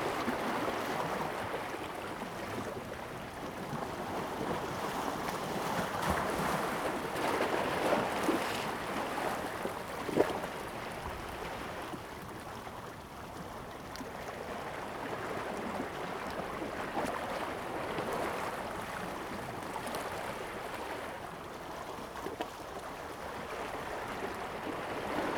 烏石鼻, Taiwan - Waves and rocks
Waves and rocks, Thunder sound
Zoom H2n MS +XY